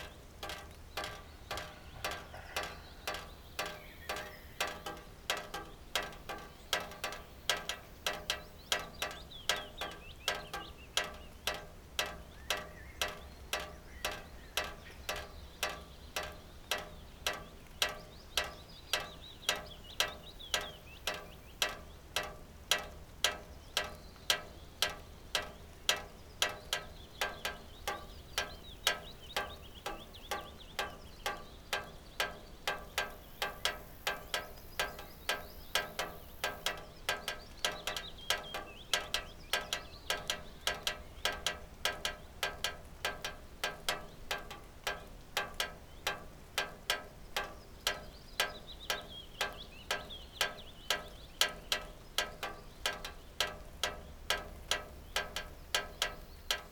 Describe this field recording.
drops falling from a leaky, damaged, rusted drainpipe, twisting a nice dynamic solo on the drainpipe base. Buddy Rich would be impressed. fresh, sunny atmosphere after heavy rain.